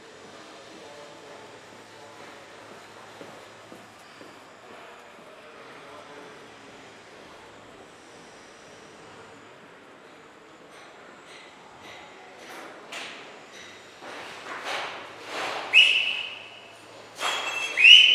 L'aquila, Portici quattro Cantoni - 2017-05-29 06-Portici 4 Cantoni